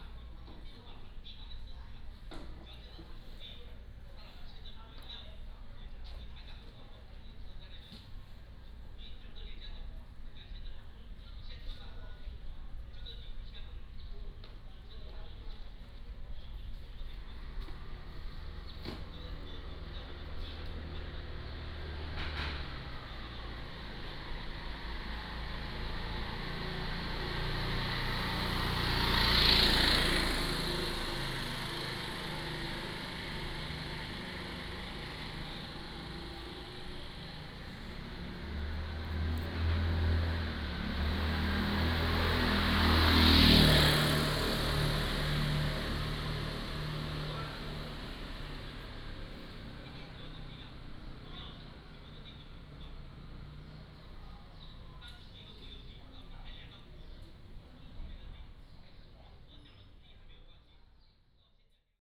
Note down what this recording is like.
Small village, Binaural recordings, Sony PCM D100+ Soundman OKM II